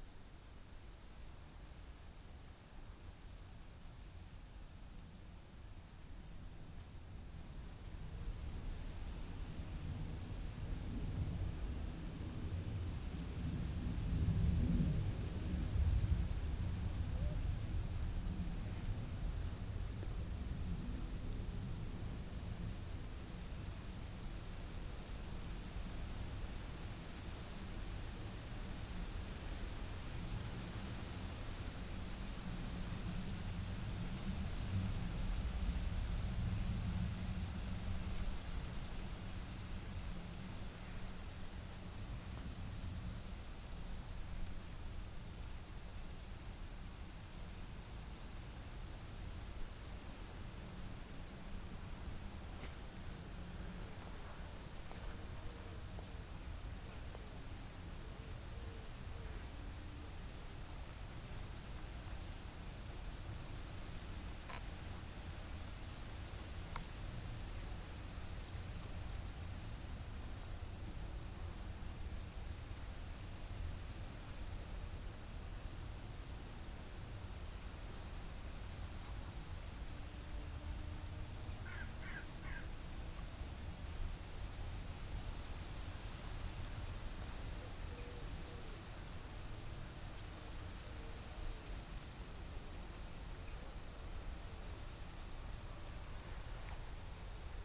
Cut Throat Lane, County Durham, UK - Underneath Sycamore Tree on Cut Throat Lane
Recording stood underneath large Sycamore Tree on Cut Throat Lane in County Durham. Wood Pigeon, Crows, insects and wind in leaves. Sounds of farm nearby. Recorded using Sony PCM-M10